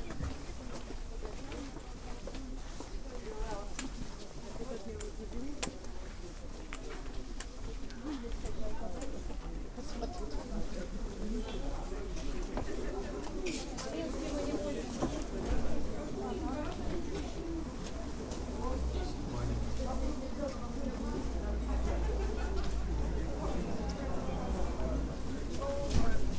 ул. Пионеров, Барнаул, Алтайский край, Россия - Barnaul 02
Walkinth through rows of merchants near "Jubileum" market in Barnaul. Voices in Russian, commercials, cars, ambient noise.